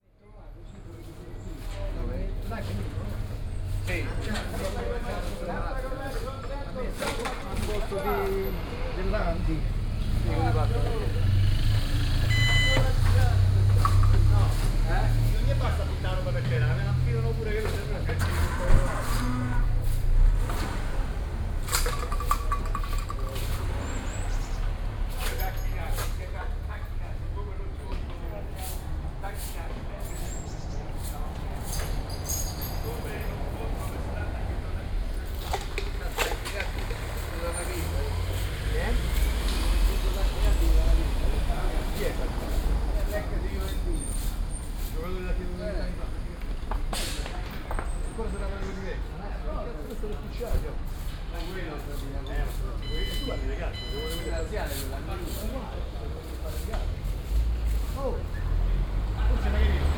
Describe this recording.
garbage man doing their work, talking, moving about their truck and another group of locals talking. (binaural)